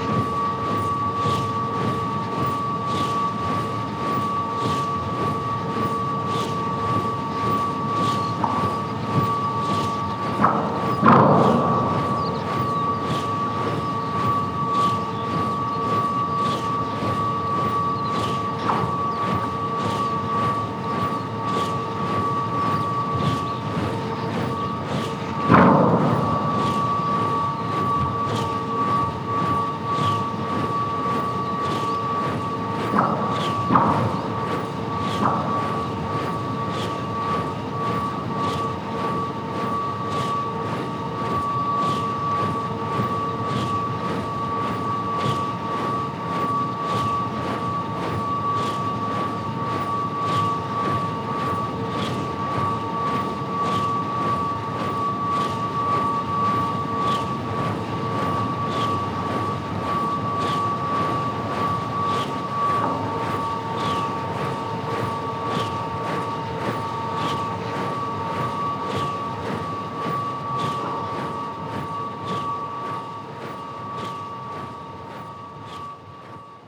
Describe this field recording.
gale, wind, wind-turbine, creaks, bangs